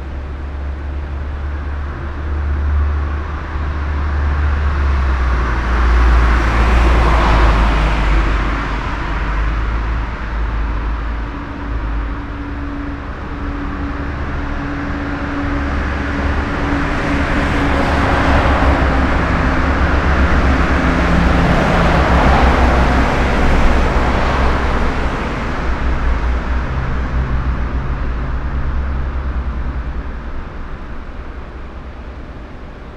Meljski Hrib, Maribor, Slovenia - waiting for river gulls to give some voice
road and river sonic scape, Drava is very shallow and fast here, gulls find their standing stones here